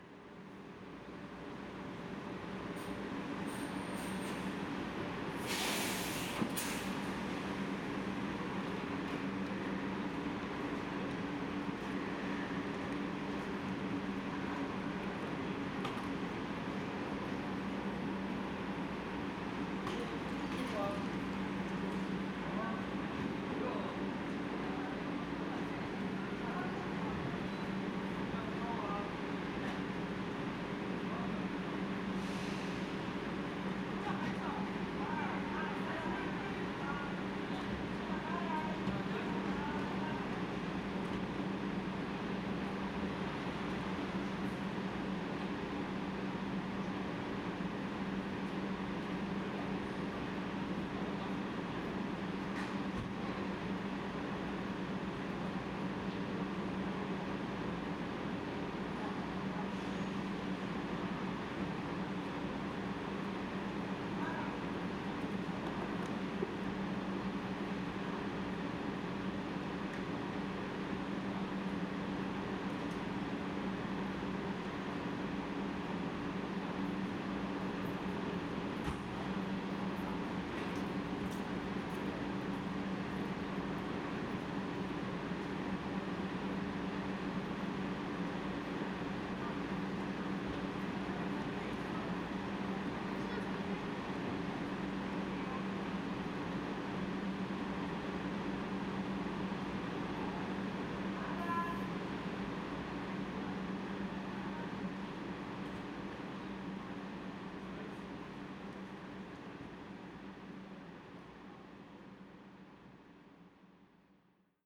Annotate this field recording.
Seoul Express Bus Terminal, Riding Platform, Bus Arrival